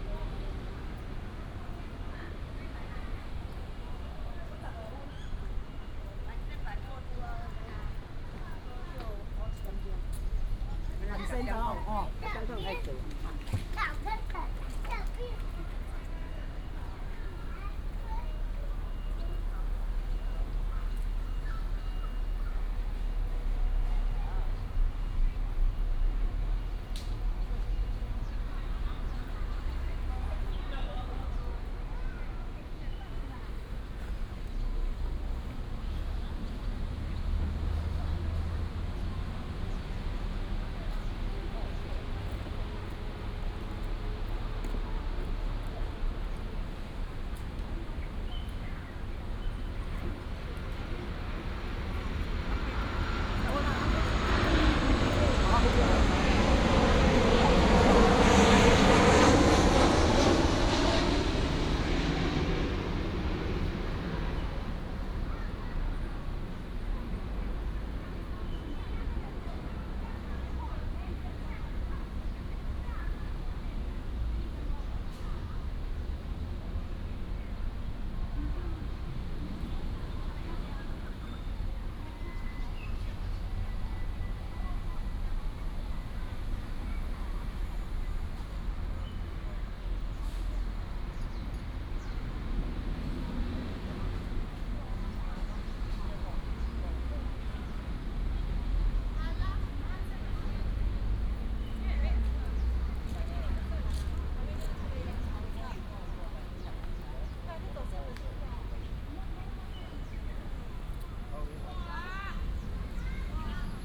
大龍峒保安宮, Datong Dist., Taipei City - in the temple
Walking in the temple, Traffic sound, sound of birds, The plane flew through
9 April 2017, 17:16